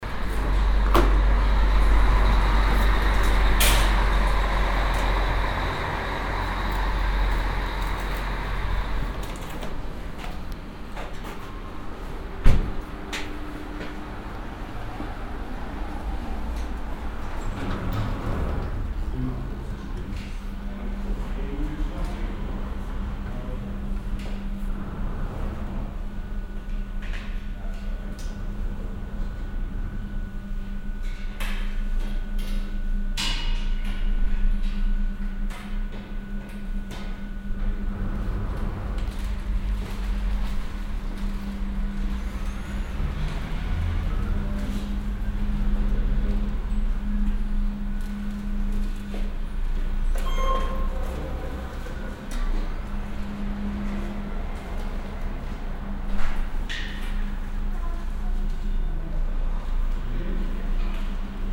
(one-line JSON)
{"title": "lech, arlberg, mountain gondola", "date": "2011-06-08 09:50:00", "description": "The Lech-Oberlech mountain Gongola ground station recorded in the early afternoon in winter time. The sound of the engine taht moves the steel rope, som passengers entering the hall passing the cashier, A Gondola arriving.\ninternational sound scapes - topographic field recordings and social ambiences", "latitude": "47.21", "longitude": "10.14", "altitude": "1441", "timezone": "Europe/Vienna"}